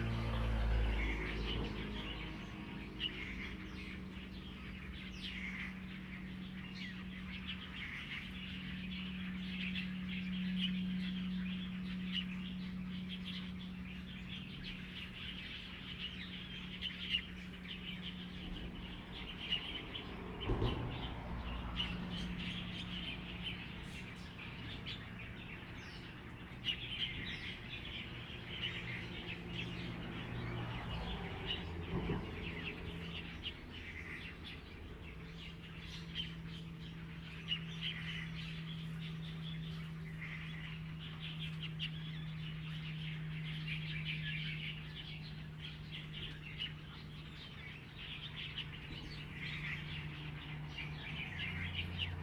Birdsong, Traffic Sound, The weather is very hot
Zoom H2n MS +XY
9 September 2014, ~10:00